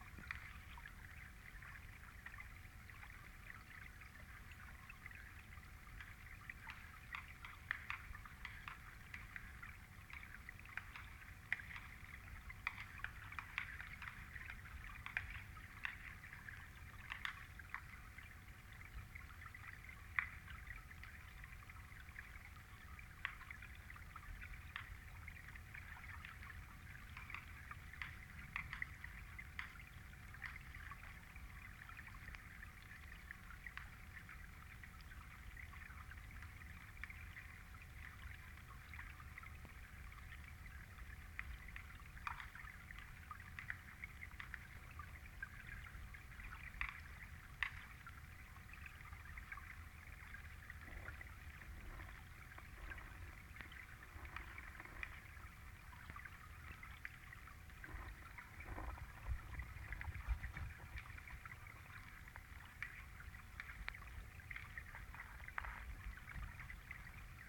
{
  "title": "Simpson Lake Beach, Valley Park, Missouri, USA - Simpson Lake Beach",
  "date": "2021-01-15 13:41:00",
  "description": "Underwater hydrophone recording of snow falling onto the lake just off the beach.",
  "latitude": "38.56",
  "longitude": "-90.46",
  "altitude": "123",
  "timezone": "America/Chicago"
}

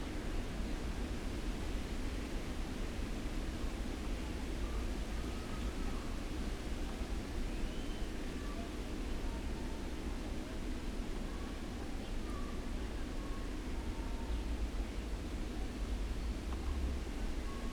{
  "title": "Köpenick, Berlin - at the river Wuhle",
  "date": "2016-05-16 12:40:00",
  "description": "Berlin Köpenick, at the river Wuhle, ambience, passing-by freight train, nothing to hear from the river itself.\n(Sony PCM D50, DPA4060)",
  "latitude": "52.46",
  "longitude": "13.58",
  "altitude": "36",
  "timezone": "Europe/Berlin"
}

